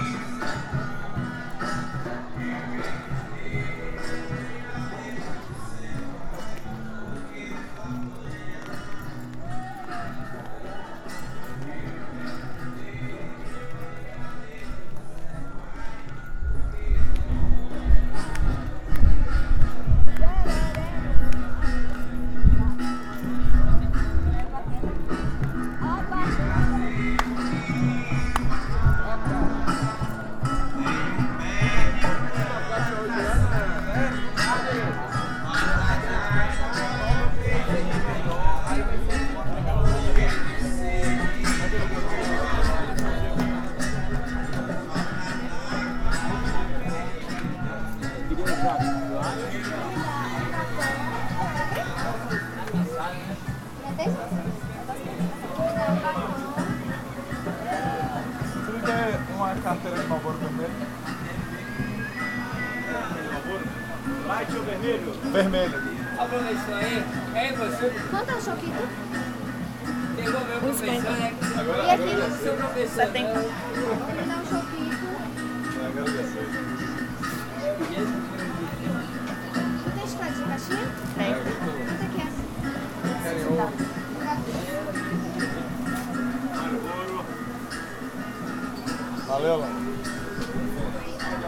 Cachoeira, BA, Brasil - Quinta do Preto Velho
Audio da rua 25 de junho, rua cheia de bares, gravado durante uma pequena caminhada pela mesma. No momento da gravação estava rolando música ao vivo em um dos bares. Captado para a disciplina de Sonorização ministrada por Marina Mapurunga na UFRB.
Audio captado utilizando um Tascam DR-100
Bahia, Brazil, 27 March 2014